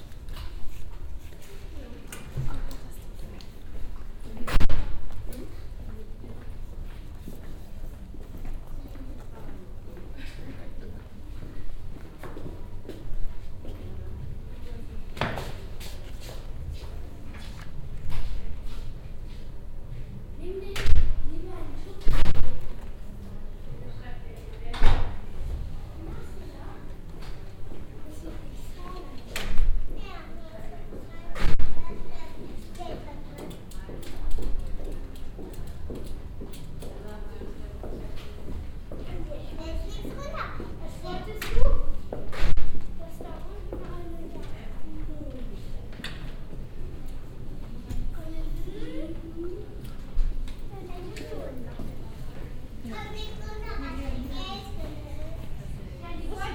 Walking on a corridor, passing by dance studios with workshops going on
soundmap nrw: social ambiences/ listen to the people - in & outdoor nearfield recordings
24 January 2009, 17:29